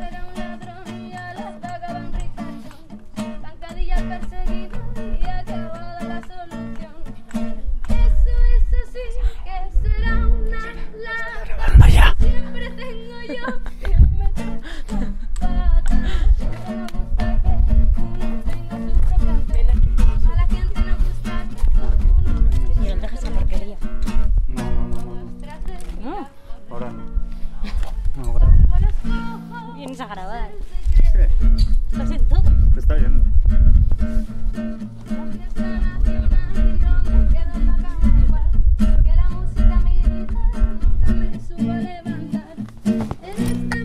leioa bellas artes campa
friday, december 11th... some people drinking beer and singing in a warm afternoon in the CAMPA of the faculty.
songs by Xandra